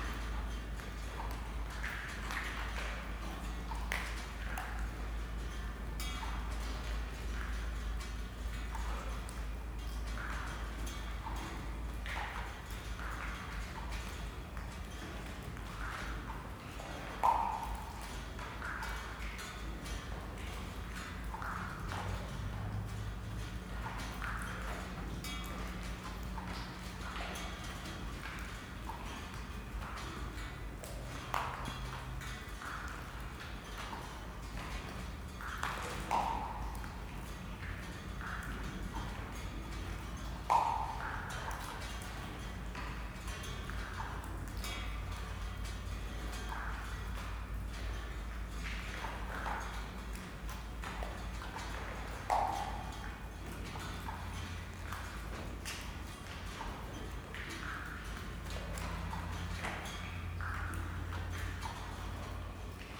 Dripping roof, Derelict games hall, Spreepark
Drips falling onto stone, metal and wood in the derelict games hall. Derelict former East Berlin fun fair now abandoned, overgrown and completely surreal.
Berlin, Germany